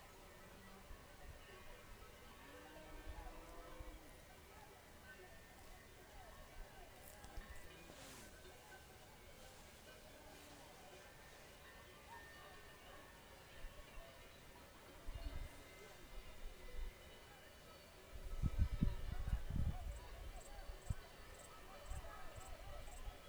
{"title": "Willersalpe, Bad Hindelang im Oberallgäu - Almabtrieb", "date": "2009-08-12 20:00:00", "description": "Am 12. August 2009 auf ca. 1500 Meter über NN, nahe der österreichischen Grenze, gegen 20:00 Uhr: Ein bevorstehendes Gewitter zwingt die Alpbetreiber, ihre Hirtenburschen loszuschicken, um die Rinder von einer höhergelegenen Alm auf eine tiefergelegene zu treiben. Bei genauem Hinhören hört man leise die Rufe der Hirtenburschen, die damit die Kühe antreiben. Am Vortag war bereits ein Rindvieh bei schlechten Witterungsbdingungen auf die östereichische Seite abgestürzt. Solche Unfälle passieren nicht selten, bedeuten aber immer einen gewissen wirtschaftlichen Schaden für die Betreiber einer solchen Alpe.", "latitude": "47.48", "longitude": "10.46", "altitude": "1576", "timezone": "Europe/Berlin"}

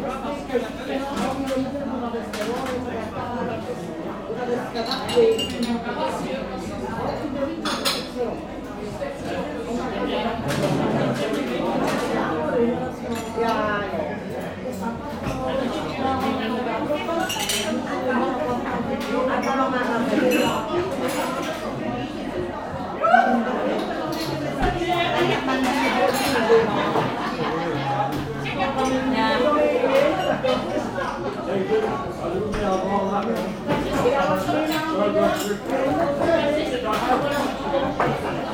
{"title": "Aalst, België - Into the bar", "date": "2019-02-23 11:30:00", "description": "Into the Café Safir. A busy atmosphere, with many elderly people having a good time at lunchtime.", "latitude": "50.94", "longitude": "4.04", "altitude": "14", "timezone": "GMT+1"}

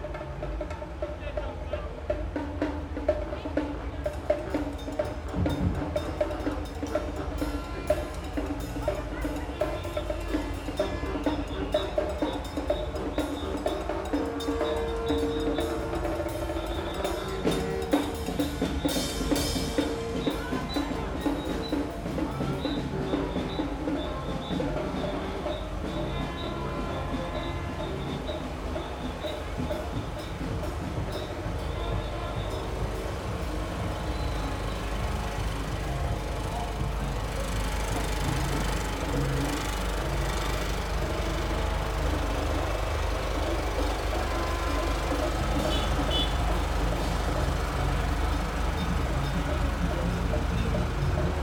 {"title": "Rottmayrstraße, Laufen, Deutschland - Dissolving Carnival – End of the Procession?", "date": "2018-02-13 16:00:00", "description": "The waggons and orchestras are supposed to dissolve in this narrow street and end their traditional carnival procession. But they just don't. The Bavarian samba band insists on playing on, a foghorn honks and finally gives way to the distorted bass from loudspeakers. Acoustically, the medieval street works like an intimate boombox.", "latitude": "47.94", "longitude": "12.94", "altitude": "407", "timezone": "Europe/Berlin"}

